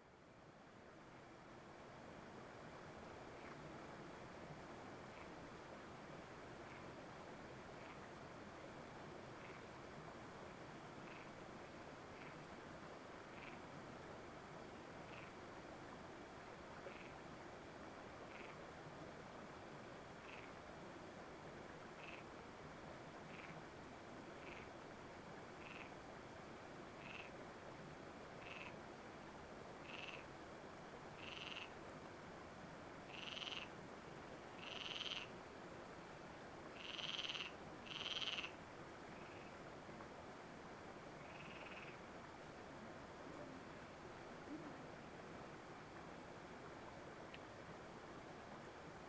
Ctra. de Callosa, front 3 [Bolulla], Alicante, Espagne - Bolulla - Espagne - Ambiance du soir
Bolulla - Province d'Alicante - Espagne
Ambiance du soir sur le pont - quelques grenouilles....
ZOOM F3 + AKG 451B